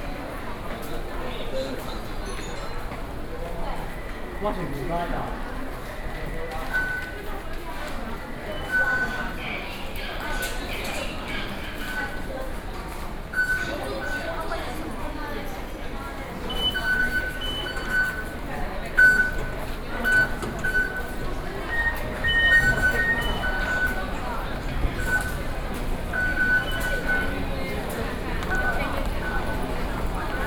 Zhongxiao Fuxing Station, Taipei City - Walking into the MRT Station
29 October 2012, 16:29